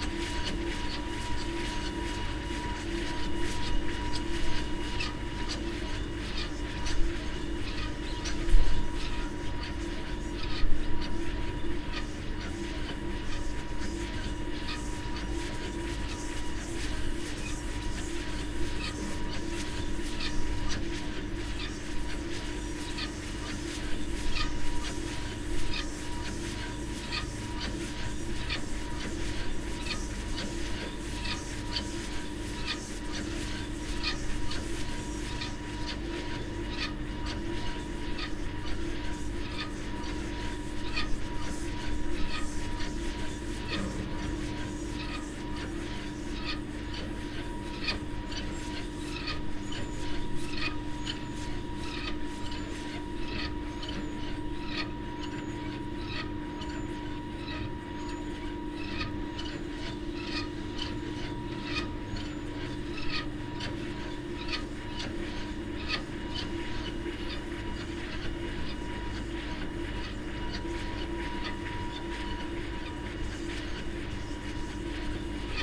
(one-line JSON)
{"title": "Luttons, UK - wind turbine ...", "date": "2017-01-09 08:21:00", "description": "wind turbine ... lavalier mics in a parabolic ...", "latitude": "54.13", "longitude": "-0.55", "altitude": "102", "timezone": "GMT+1"}